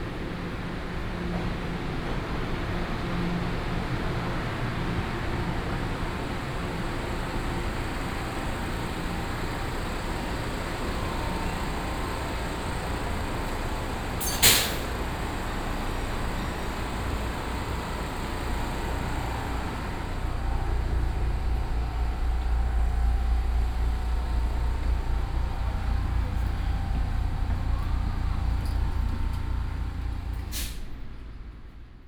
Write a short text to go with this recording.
In the train station platform, Train arrives and leaves